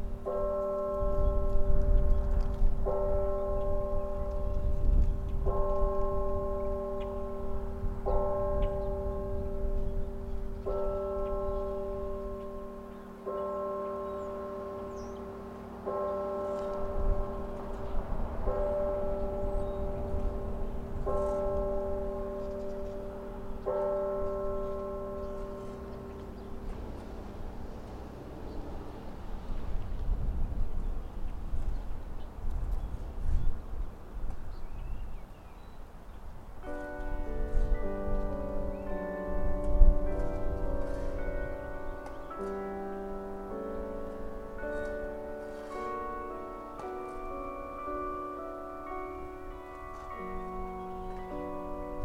Finch Park, Lake St., Arcadia, MI, USA - Noon Chimes (Trinity Lutheran Church)
At Finch Park, near the tennis courts, the noon chimes are heard from about a block and a half away. A west wind continues to keep the air temperature relatively low on this early spring Sunday. The township's handyman, with a shovel, tidies up the edges of a sidewalk across the street. Stereo mic (Audio-Technica, AT-822), recorded via Sony MD (MZ-NF810, pre-amp) and Tascam DR-60DmkII.